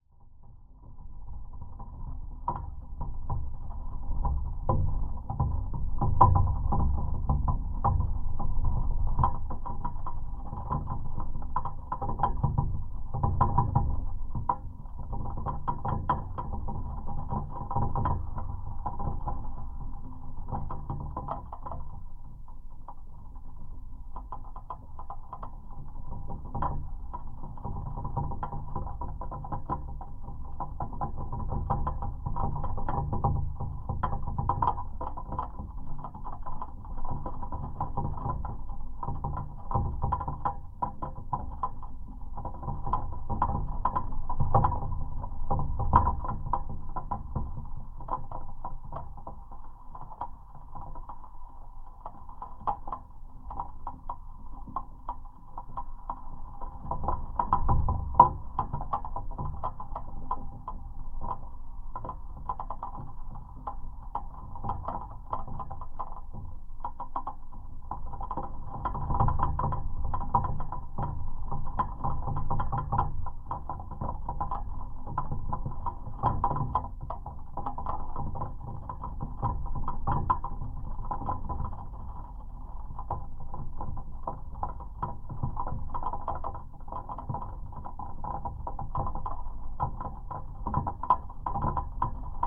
listening to flag pole through my geophone.
Utenos apskritis, Lietuva, 27 March